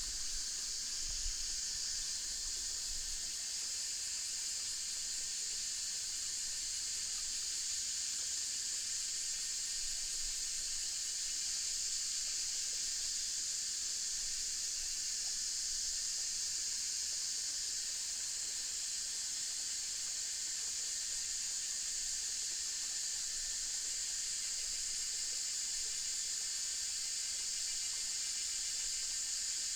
泉源橋, 大溪區承恩路 - On the bridge
Stream sound, Cicada cry, Traffic sound, On the bridge